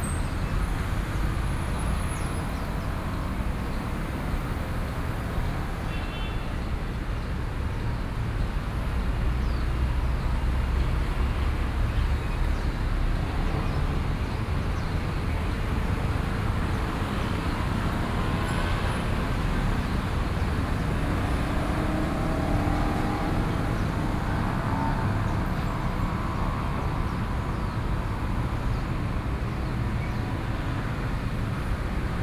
Park entrance, birds song, traffic noise, Sony PCM D50 + Soundman OKM II
Taoyuan City, Taoyuan County, Taiwan